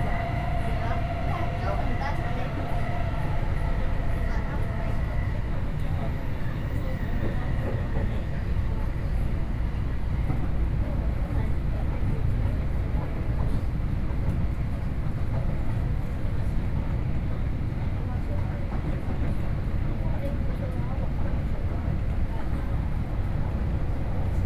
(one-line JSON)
{"title": "Taipei, Taiwan - in the MRT train", "date": "2012-10-28 16:53:00", "latitude": "25.14", "longitude": "121.49", "altitude": "12", "timezone": "Asia/Taipei"}